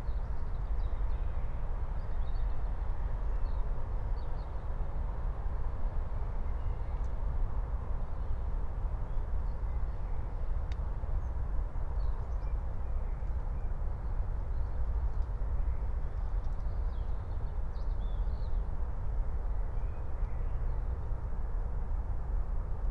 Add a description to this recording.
05:00 early morning Friedhof Columbiadamm, Berlin, traffic drone, an owl, first birds. (remote microphone: PUI AOM 5024 / IQAudio/ RasPi Zero/ 4G modem)